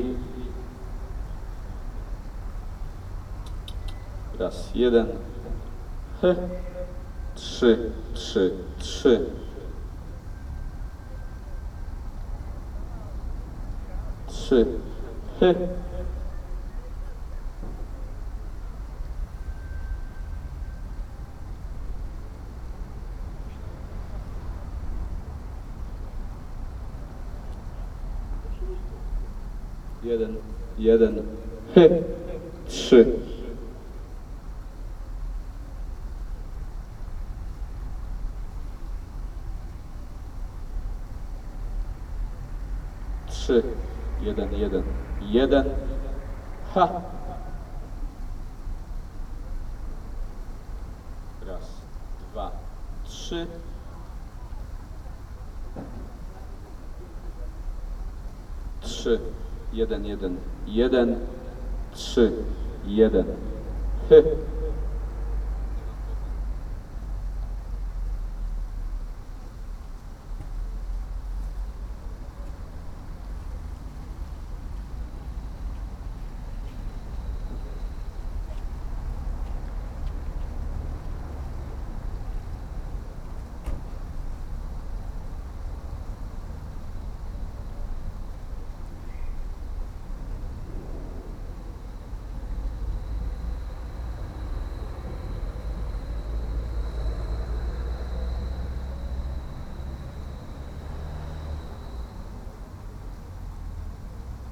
20 October Square, Srem - PA system test
a PA system being set on the square. sound guy testing the system by playing some king of horn sound through it and talking into the microphone. (Roland R-07 internal mics)
Śrem, Poland